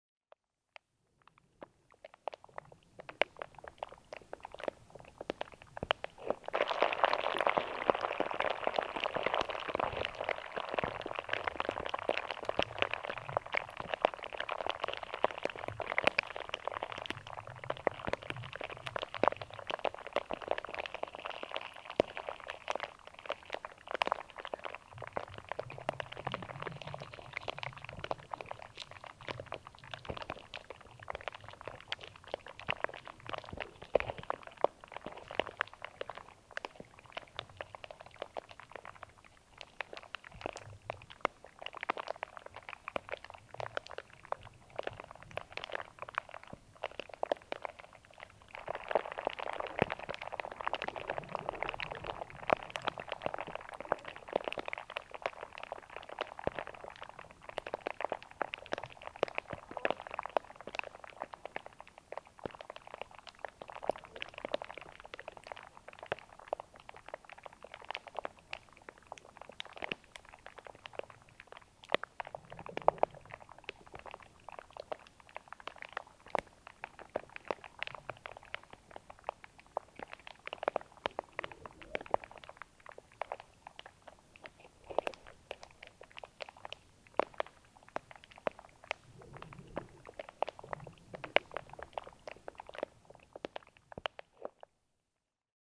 Strong sun on a pond and strange bubbles in cyclic appearance into the green algae. Recorded with a contact microphone directly buried into the algae. I didn't understand how and why these bubbles were generated.